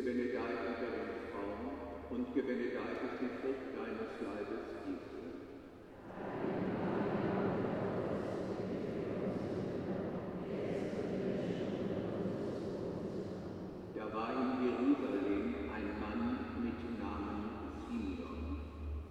{"title": "Rosary at Mariendom, Neviges. - Rosary at Mariendom, Neviges. Church service at the end of pilgrimary.", "latitude": "51.31", "longitude": "7.09", "altitude": "162", "timezone": "Europe/Berlin"}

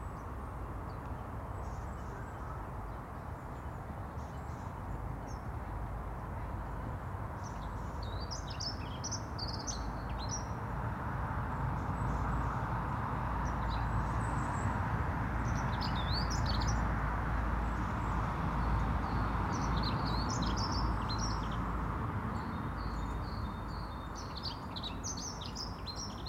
{"title": "Contención Island Day 19 inner northeast - Walking to the sounds of Contención Island Day 19 Saturday January 23rd", "date": "2021-01-23 10:37:00", "description": "The Poplars Roseworth Avenue The Grove\nA family play hide and seek\nof a sort\nthe three children dressed in ski suits\nBirdsong comes and goes\nbut I see few birds\nThe low winter sun is lighting up the grass\na carpet of frost-droplet sparkles", "latitude": "55.00", "longitude": "-1.61", "altitude": "65", "timezone": "Europe/London"}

